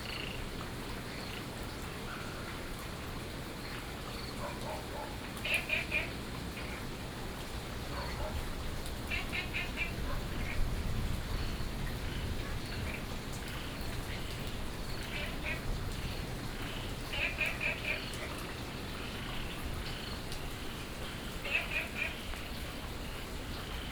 樹蛙亭, 埔里鎮桃米里 - After the thunderstorm
Frogs chirping, After the thunderstorm, Insects sounds, Dogs barking
Nantou County, Puli Township, 桃米巷29-6號